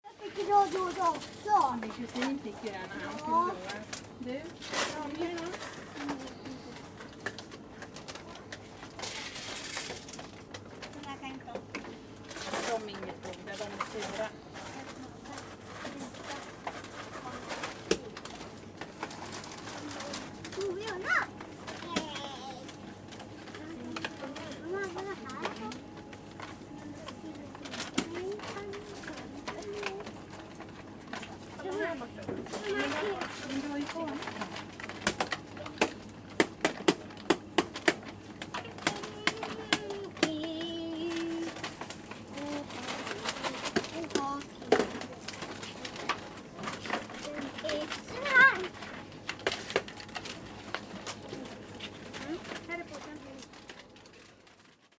{"title": "sturefors, supermarket - losgodis, supermarket", "description": "stafsäter recordings.\nrecorded july, 2008.", "latitude": "58.34", "longitude": "15.72", "altitude": "78", "timezone": "GMT+1"}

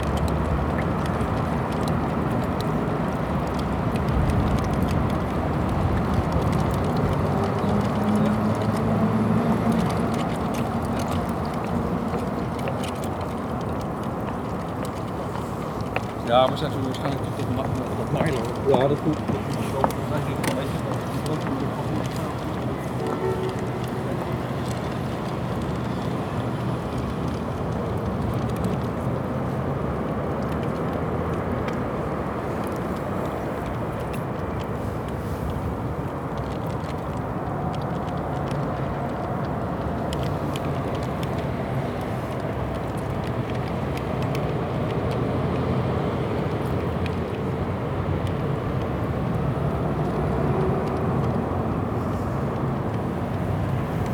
{"title": "Binckhorst, Den Haag, Netherlands - Garbage in the tub", "date": "2013-03-25 13:50:00", "description": "Some garbage in the water mooved by wind with surrounding traffic and some people talking while passing. Recorded using Zoom H2n, mid/side mode.", "latitude": "52.07", "longitude": "4.35", "altitude": "5", "timezone": "Europe/Amsterdam"}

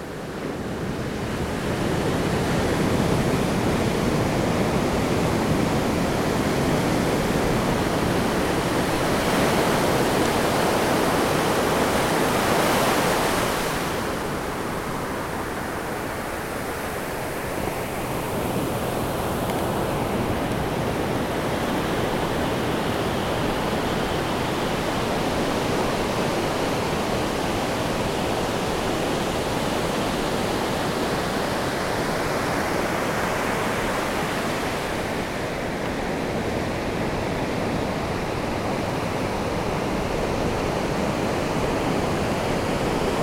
{"title": "Silver Strand Beach, Coronado, CA United States - Silver Strand Surf I", "date": "2012-11-06 07:30:00", "description": "Breaking waves (waist high surf) and spume early AM, sand beach, Silver Strand, Coronado CA. Recorded Zoom H2N with wind cover, WAVE.", "latitude": "32.62", "longitude": "-117.14", "timezone": "America/Los_Angeles"}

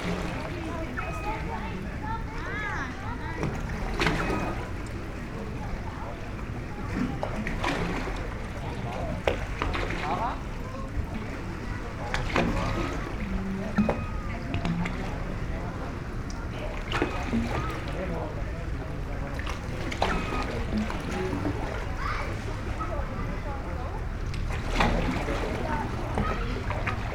{"title": "Novigrad, Croatia - three round and two square holes", "date": "2013-07-13 22:00:00", "description": "sounds of sea with evening city hum, steps, small owl, seagulls ... at the edge of small concrete pool", "latitude": "45.31", "longitude": "13.56", "timezone": "Europe/Zagreb"}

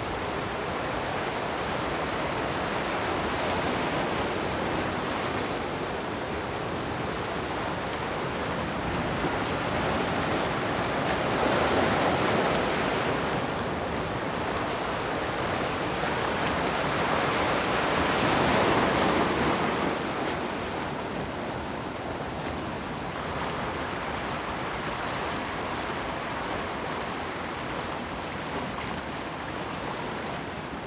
{"title": "野銀港澳, Jivalino - Waves and tides", "date": "2014-10-29 14:23:00", "description": "Sound of the waves, Waves and tides\nZoom H6 +Rode NT4", "latitude": "22.04", "longitude": "121.57", "altitude": "6", "timezone": "Asia/Taipei"}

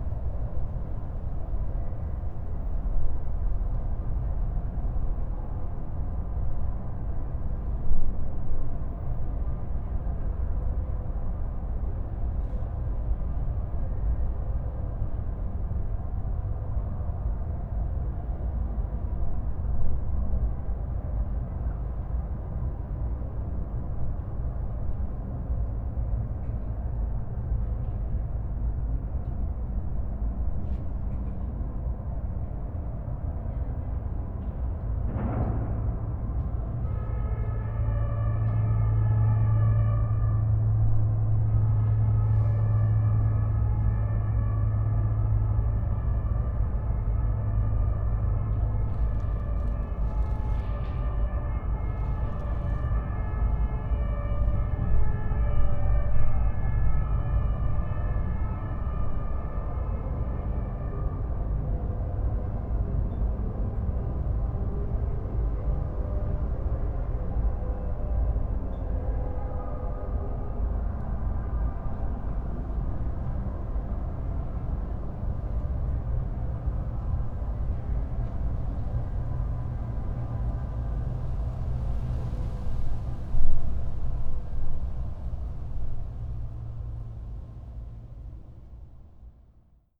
{"title": "Berlin Bürknerstr., backyard window - distant mayday sounds", "date": "2016-05-01 22:00:00", "description": "drones and distan sounds from the 1st of May parties in Kreuzberg\n(SD702, MKH8020)", "latitude": "52.49", "longitude": "13.42", "altitude": "45", "timezone": "Europe/Berlin"}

